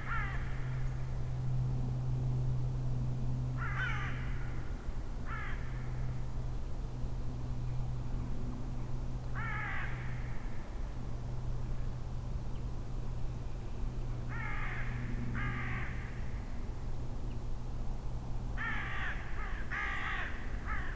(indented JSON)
{
  "title": "Hayange, France - Old dog and the old mine",
  "date": "2016-11-13 09:00:00",
  "description": "Near to the Gargan mine in Hayange, an old but still nasty dog is barking. Lorraine area is so welcoming everytime we go here ! At the end of the recording, a goshawk is hunting crows.",
  "latitude": "49.32",
  "longitude": "6.05",
  "altitude": "232",
  "timezone": "Europe/Paris"
}